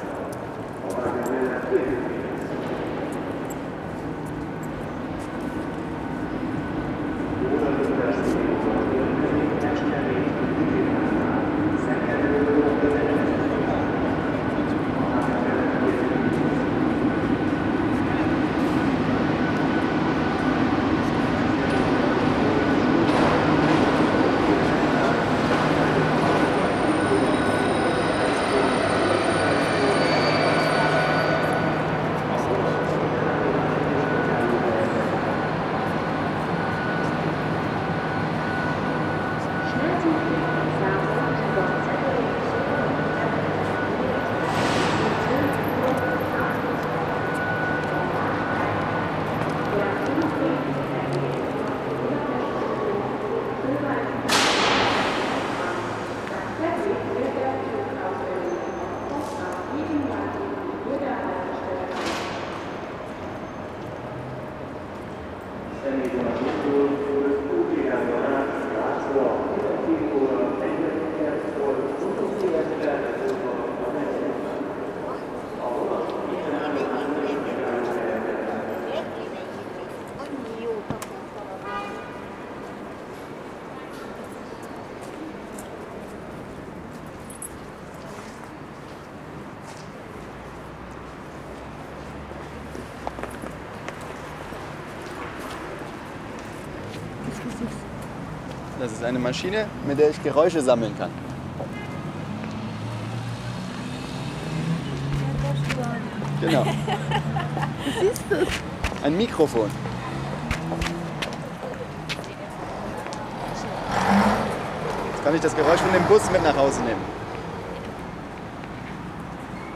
{"title": "Istanbul - Berlin: Budapest Keleti train station", "date": "2010-10-30 16:57:00", "description": "Stop for three days in Budapest. The hungarian language has its very own sound and intonation, none of the known. And it resounds best in the announcement speakers of a train station.", "latitude": "47.50", "longitude": "19.08", "altitude": "113", "timezone": "Europe/Budapest"}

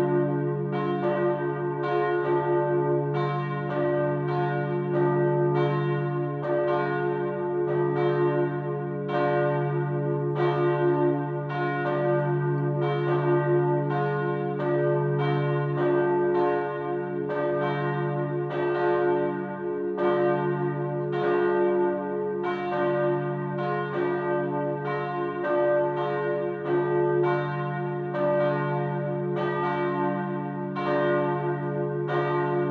{"title": "Corpus Christi Basilica, Kraków, Poland - (868 AB) Bells", "date": "2021-12-12 15:30:00", "description": "AB stereo recording of Corpus Christi Basilica at 3:30 pm on Sunday.\nRecorded with a pair of Sennheiser MKH 8020, 17cm AB, on Sound Devices MixPre-6 II.", "latitude": "50.05", "longitude": "19.94", "altitude": "209", "timezone": "Europe/Warsaw"}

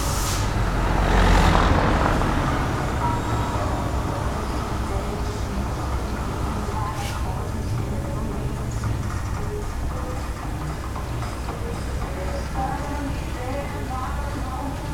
{"title": "Binckhorst, The Hague, The Netherlands - walking", "date": "2012-11-20 11:30:00", "description": "to painting cars !? Sennheiser mic, zoom", "latitude": "52.07", "longitude": "4.34", "altitude": "2", "timezone": "Europe/Amsterdam"}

